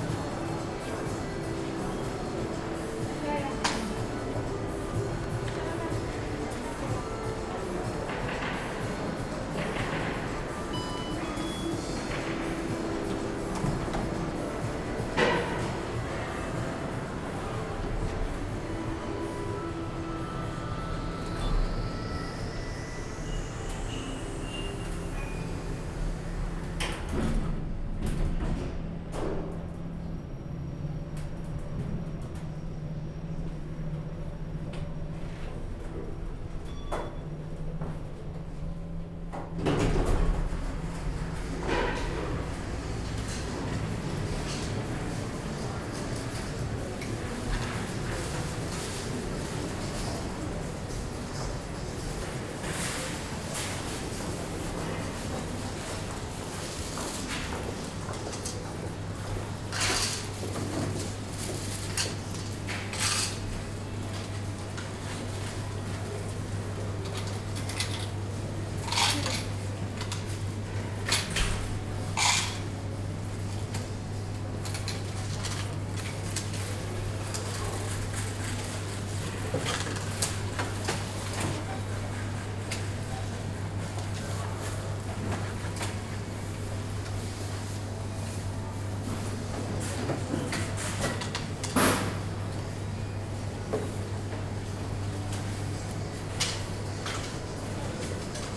{"title": "Tallinn, Viru kesku shopping center", "date": "2011-04-17 10:40:00", "description": "walking in viru kesku shopping mall on a sunday morning", "latitude": "59.44", "longitude": "24.76", "altitude": "11", "timezone": "Europe/Tallinn"}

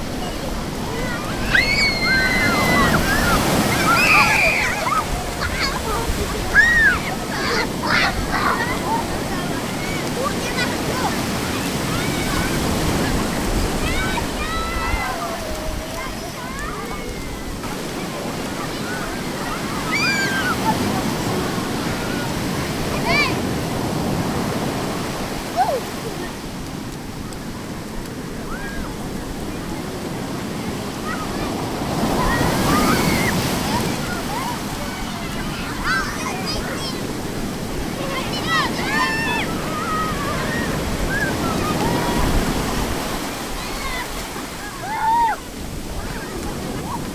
{"title": "Plage Ilbarritz", "date": "2010-07-09 14:26:00", "description": "waves, shore break, beach", "latitude": "43.46", "longitude": "-1.58", "altitude": "2", "timezone": "Europe/Paris"}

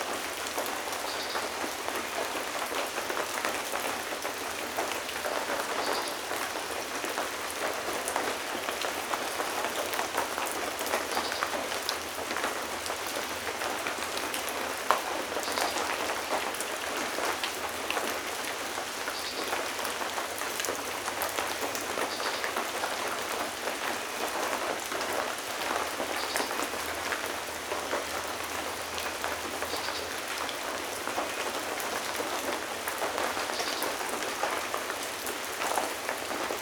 {"title": "Canto da Floresta - Light rain in Serrinha do Alambari", "date": "2021-08-31 23:30:00", "description": "Rain during the night in the Brazilian forest, in the small village of Serrinha do Alambari in the state of Rio de Janeiro. Recorded from the balcony of the house, during the night (around midnight for this part of the recording)\nGPS: -22.392420 -44.560264\nSound Ref: BR-210831-02\nRecorded during the night on 31st of August 2021", "latitude": "-22.39", "longitude": "-44.56", "altitude": "928", "timezone": "America/Sao_Paulo"}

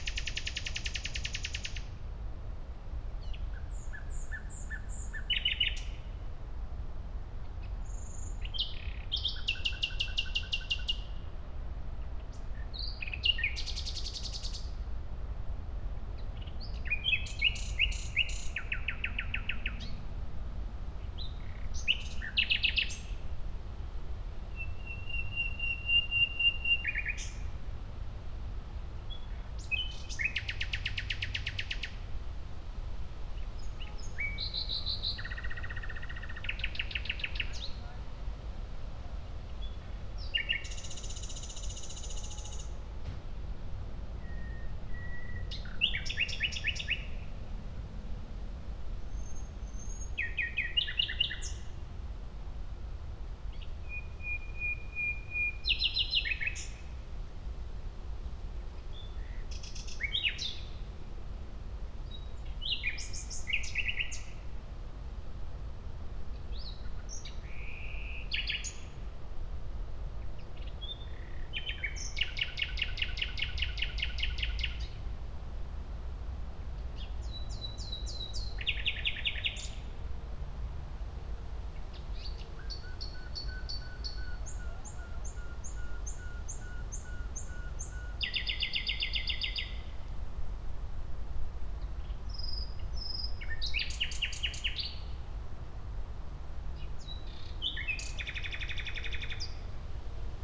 {"title": "Gleisdreieck, Kreuzberg, Berlin, Deutschland - nightingale, city hum", "date": "2017-05-17 22:25:00", "description": "lovely nighingale song at Gleisdreickpark / Technikmuseum, city hum with traffic and trains\n(SD702, MKH8020 AB60)", "latitude": "52.50", "longitude": "13.38", "altitude": "38", "timezone": "Europe/Berlin"}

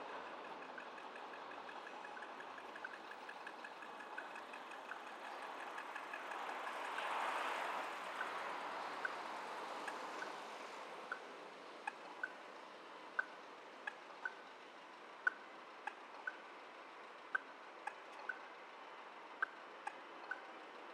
{"title": "Rijeka, Croatia, Audible Pedestrian Signs - Audible Pedestrian Signs", "date": "2013-03-17 16:25:00", "description": "Windy, heavy lo cut", "latitude": "45.33", "longitude": "14.44", "altitude": "11", "timezone": "Europe/Zagreb"}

